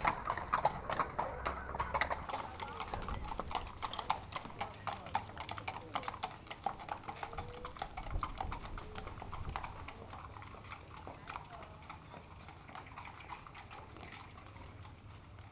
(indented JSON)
{
  "title": "Yainville, France - Yainville ferry",
  "date": "2016-09-17 10:00:00",
  "description": "The Yainville ferry, charging cars. At the end, the horses arrive.",
  "latitude": "49.46",
  "longitude": "0.82",
  "timezone": "Europe/Paris"
}